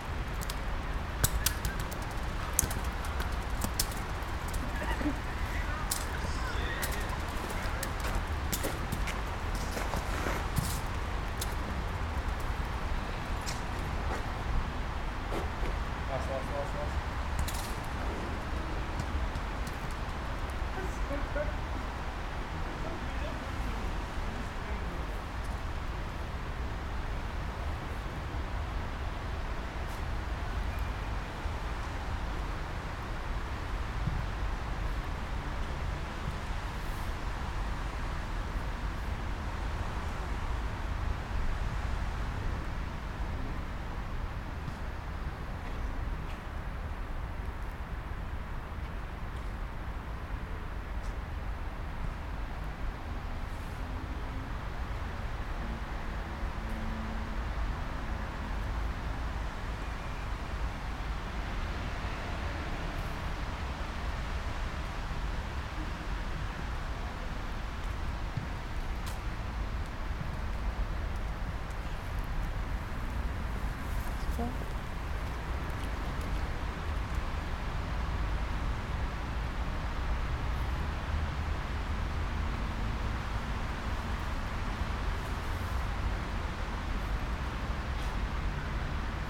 Quai du Commerce, France - Lyon Vaise Stade boucaud
Lyon Vaise, stade Boucaud, nuit tombée, rumeur de la ville et quelques footballeur - Zoom H6, micros internes.